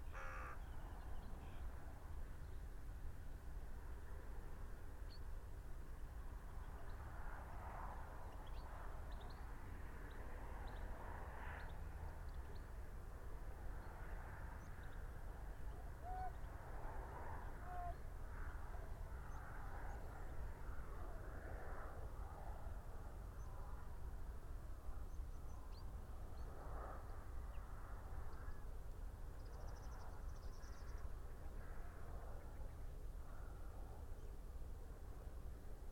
urchins wood, ryedale district ... - horses and hounds ...
horses and hounds ... parabolic ... bird calls ... pied wagtail ... linnet ... crow ... red-legged partridge ...
Yorkshire and the Humber, England, UK, 30 September 2019